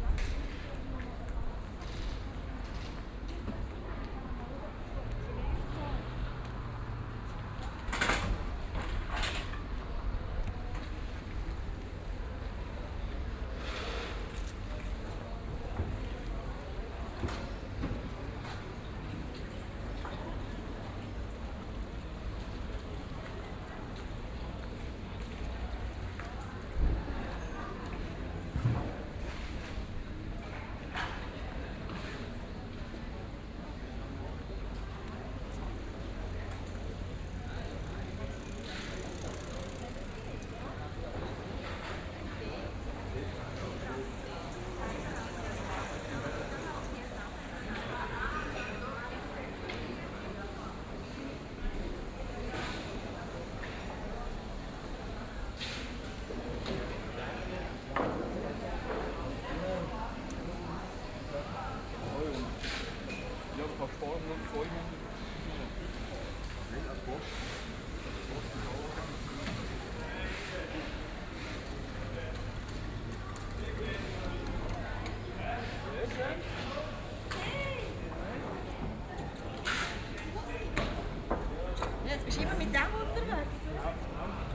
Aarau, Rathausgasse, Schweiz - walkrathausgasse
Walk from the Rathaus to the record shop, afternoon, the restaurants and bars prepare for the party at the evening, cars, no busses.